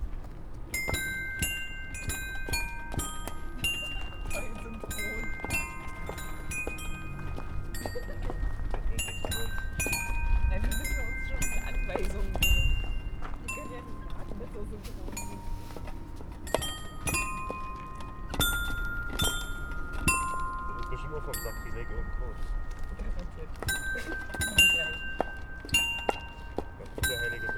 young German couple dancing on riverside sculpture
Frankfurt, Germany